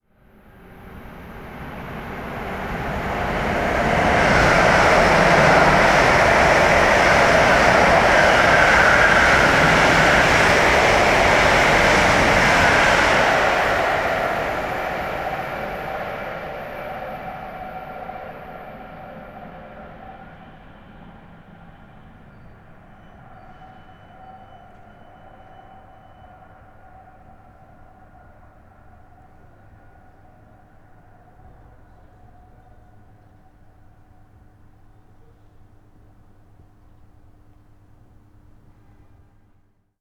Limburg Süd, ICE Bahnhof / station - ICE3 Durchfahrt / high speed train passing
ICE Durchfahrt
Der Bahnhof Limburg Süd liegt in der Nähe der mittelhessischen 36.000-Einwohner-Kreisstadt Limburg auf dem Eschhöfer Feld-Gebiet des Limburger Stadtteils Eschhofen beim Streckenkilometer 110,5 der Schnellfahrstrecke Köln–Rhein/Main [...]Durchfahrende ICE können den Bahnhof darauf ohne Geschwindigkeitsverminderung mit bis zu 300 km/h passieren.
ICE3 high speed train passing
The station is served by regular InterCityExpress services. Due to Limburg's relatively small size, passenger traffic is rather low, although commuters to Frankfurt am Main value the fast connections. Some 2,500 people use the station daily. The station has four tracks in total, of which two are equipped with a platform and two allow through trains to pass the station unobstructed at speeds of up to 300 km/h. Track one's platform, used by trains to Frankfurt, Mainz and Wiesbaden, also houses the ticket office. A bridge connects it to track four, which is used by trains going to Köln.
Limburg an der Lahn, Germany, August 2009